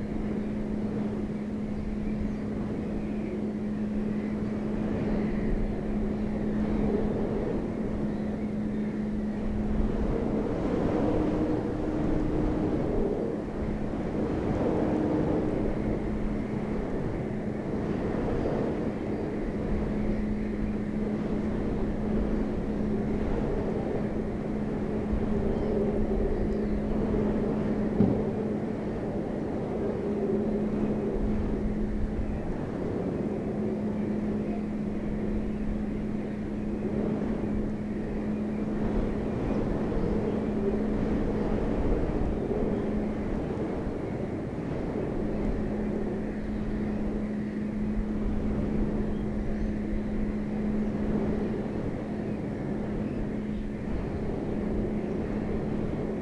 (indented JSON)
{
  "title": "workum: bird sanctuary - the city, the country & me: observation platform",
  "date": "2013-06-25 16:11:00",
  "description": "wind-blown railing\nthe city, the country & me: june 25, 2013",
  "latitude": "52.97",
  "longitude": "5.41",
  "timezone": "Europe/Amsterdam"
}